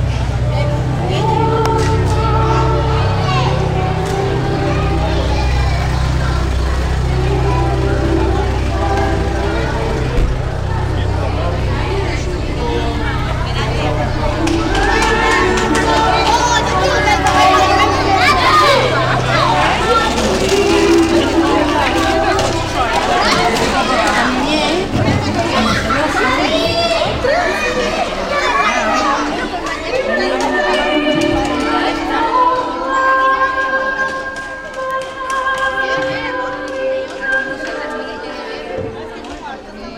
Plaza de España, nº4, Nerja - pop-up flea market
pop-up kind of a flea market happening in the yard; music, children